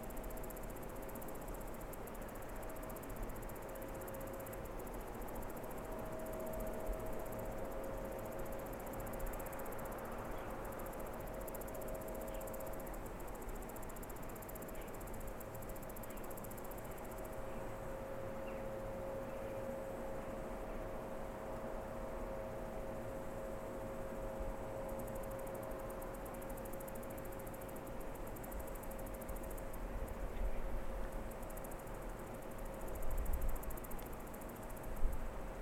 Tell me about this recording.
Salto do Castro com som da barragem. Aqui o Douro entra pela primeira vez em Portugal. Mapa Sonoro do Rio Douro The general soundscape with the sound of the Power Plant. Here the Douro enters the Portuguese territory for the first time. Douro River Sound Map.